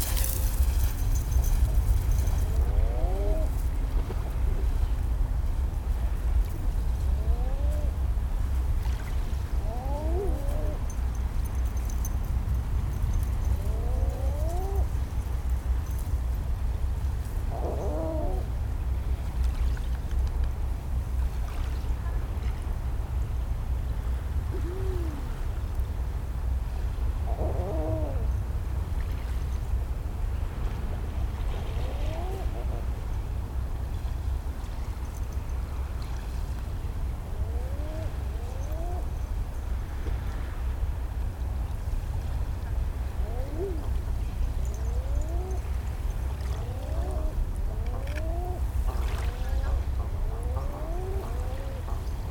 5 Common Eider couples hanging out in a cove, calling to each other and squabbling occasionally. It's afternoon rush hour on a beautiful sunny Monday in Maine. You can hear constant, low-level hum of traffic from I-95 in the distance as well as walkers on the nearby trail and an airplane passing overhead around 1:25. Rhoda the puppy playing in the sand and jingling her collar.
Recorded with an Olypus LS-10 and LOM mikroUši